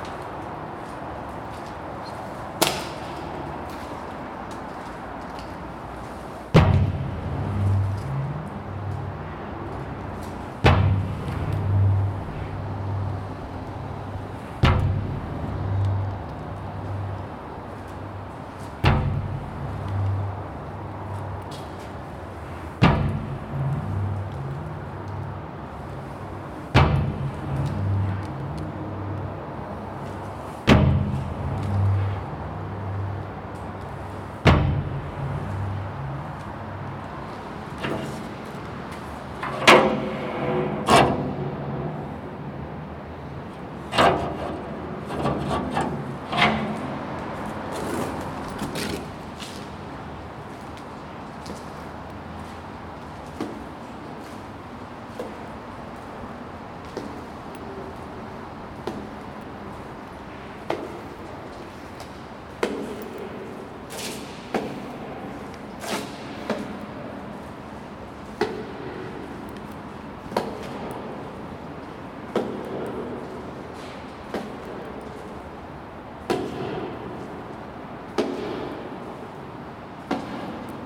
Sulphur point motorway walk underpass, Northcote Point, Auckland, New Zealand - Sulphur point motorway walk underpass
Recording made under the motorway en-route to Sulphur Beach Reserve